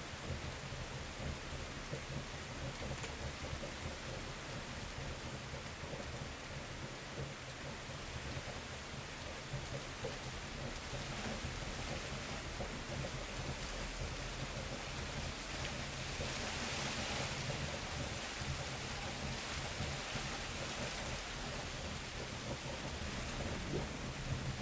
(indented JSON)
{
  "title": "koeln, beginning thunderstorm - koeln, descending thunderstorm, very hard rain",
  "description": "recorded june 22nd, 2008, around 10 p. m.\nproject: \"hasenbrot - a private sound diary\"",
  "latitude": "50.97",
  "longitude": "6.94",
  "altitude": "50",
  "timezone": "GMT+1"
}